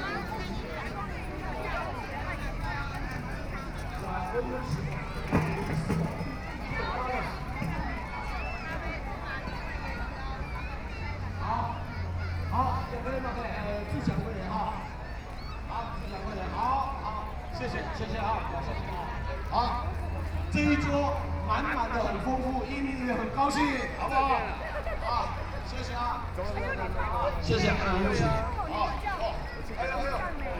Taipei City Hakka Cultural Park - walk in the Park
Hakka Culture Festival, Binaural recordings, Sony PCM D50 + Soundman OKM II